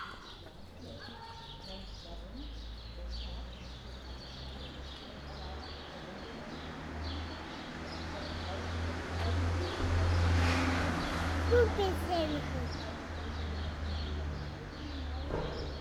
radio aporee - spring morning
warm spring morning, music from an open window, sounds and voices in the street, in front of the radio aporee headquarter